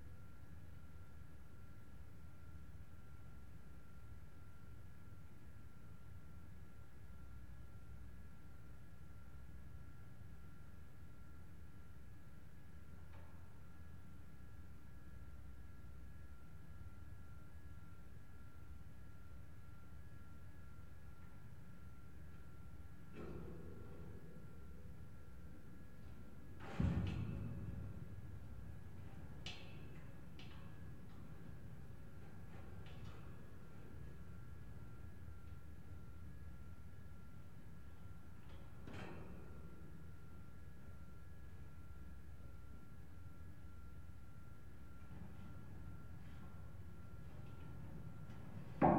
Bd Armand Duportal, Toulouse, France - metalic vibration 03
steel portal + Wind
Captation ZOOMh4n + C411PP